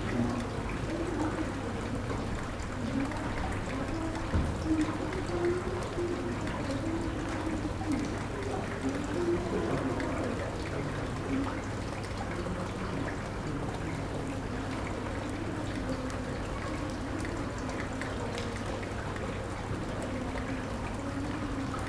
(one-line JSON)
{"title": "Strada Postăvarului, Brașov, Romania - 2020 Christmas in Brasov, Transylvania - A Suprisingly Calm Street", "date": "2020-12-25 17:55:00", "description": "It was Christmas yesterday, so I took a walk through the historical city centre. Despite the pandemic there were many people on the streets. Yet as usual, they pack the \"main street\" of sorts (think Oxford Street in London) but a couple of steps away there's a parallel street which is almost empty so you can hear rain drops and roof drainpipes. Recorded with Superlux S502 Stereo ORTF mic and a Zoom F8 recorder.", "latitude": "45.64", "longitude": "25.59", "altitude": "588", "timezone": "Europe/Bucharest"}